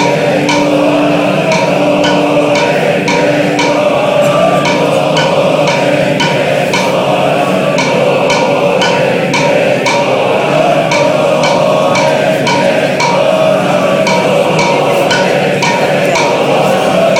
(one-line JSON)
{"title": "Tashiro, Aoi Ward, Shizuoka, Shizuoka Prefecture, Japan - Shinto chants from Temple", "date": "2013-06-23 17:00:00", "description": "Chanting in a temple on Mt.Shichimenzen.", "latitude": "35.50", "longitude": "138.20", "altitude": "2784", "timezone": "Asia/Tokyo"}